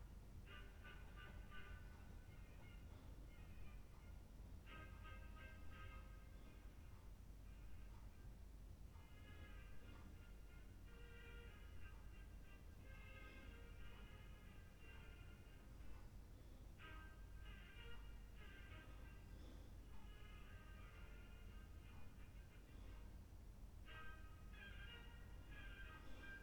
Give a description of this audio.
urban hospital, sleepless night, sound of a tiny radio, room neighbour's breath.